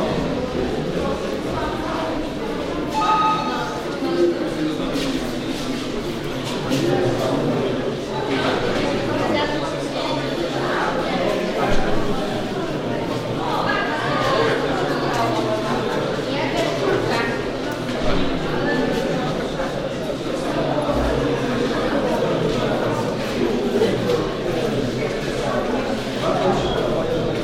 art opening, Kronika Gallery Bytom Poland
Bytom, Poland